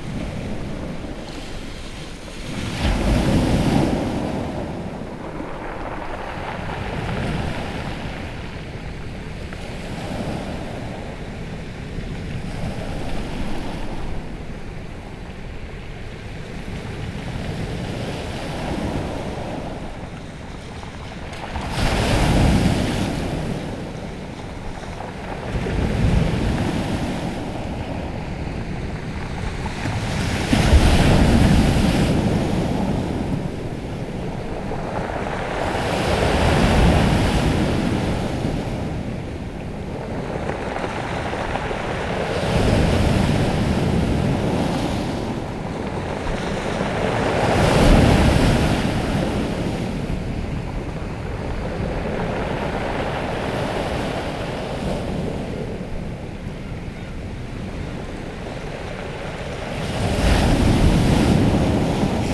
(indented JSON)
{
  "title": "Pebble beach remnant Deoksan",
  "date": "2019-11-10 13:00:00",
  "description": "Returning to this remnant pebble beach one year on...there is increased military security along the coast in this area...access is restricted...",
  "latitude": "37.38",
  "longitude": "129.26",
  "altitude": "6",
  "timezone": "Asia/Seoul"
}